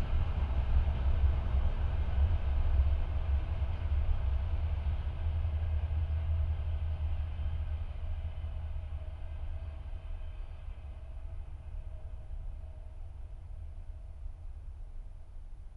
Uljaste tee, Sonda, Ida-Viru maakond, Estonia - Night train
Train passing by